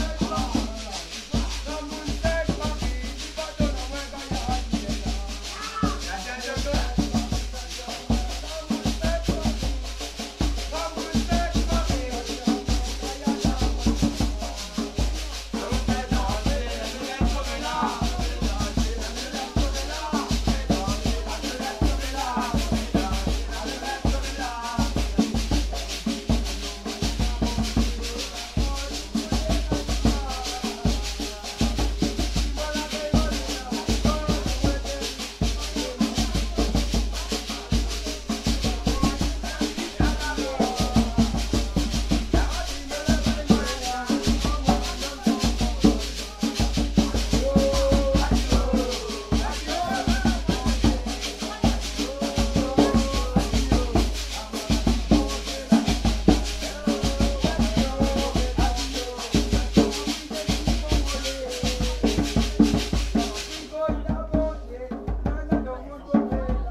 maloya au marche couvert de saint pierre
joueurs de maloya sur le marche de saint pierre de la reunion